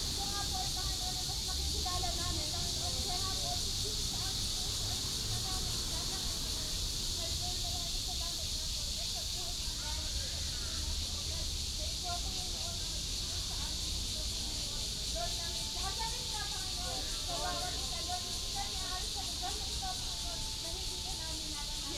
延平公園, Taoyuan Dist. - walking in the Park
walking in the Park, Cicada cry, traffic sound
Taoyuan District, Taoyuan City, Taiwan, 27 July 2017